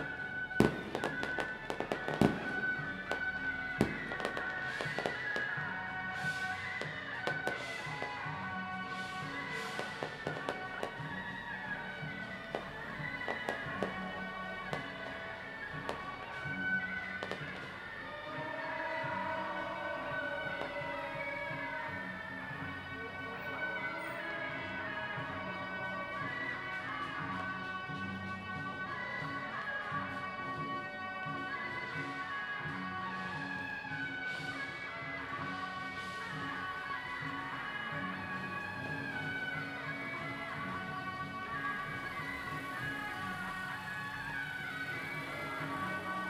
大仁街, Tamsui District, New Taipei City - Traditional festival
Traditional festival parade, Firecrackers, Fireworks sound
Zoom H2n MS+XY
New Taipei City, Taiwan